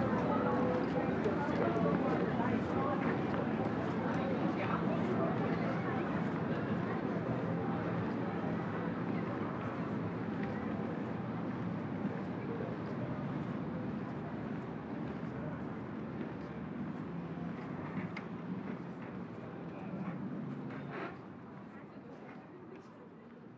Binnenalster, Alsterpavillon. The Außenalster river is like a big lake. It’s extremely traveled by tourists. This is a short walk in the middle of a devastating mass tourism. Huge amounts of tourists drinking, people in the bars, regular calls from tourist boats.
Hamburg, Germany, 19 April